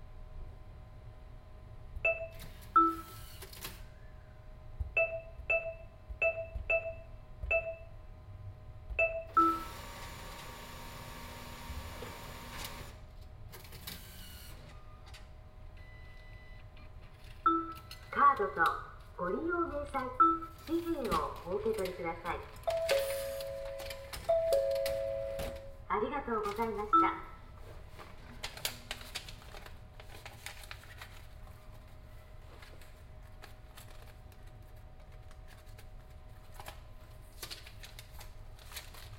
Japan, Tokyo, Shibuya, Jingumae, ６丁目 - cash machine
recorded with tascam dr-100 and binaural okm
Shibuya, Jingumae, ６丁目３０−１, 9 September 2011